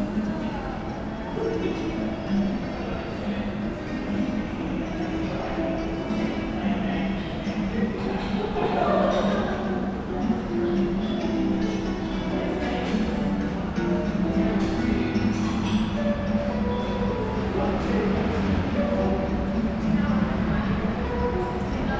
neoscenes: 1 Martin Place, guitarist